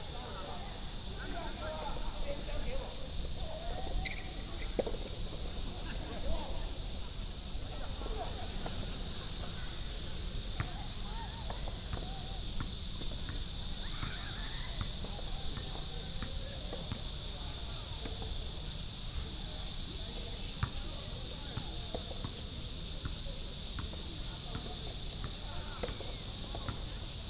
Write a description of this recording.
Next to the tennis court, Cicada cry